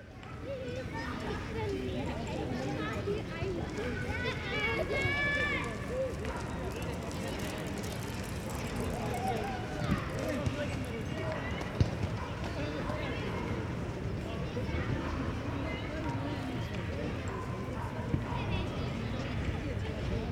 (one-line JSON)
{"title": "Berlin, Weichselplatz - playground", "date": "2011-10-09 16:20:00", "description": "Weichselplatz, playground, sunday afternoon ambience", "latitude": "52.49", "longitude": "13.44", "altitude": "39", "timezone": "Europe/Berlin"}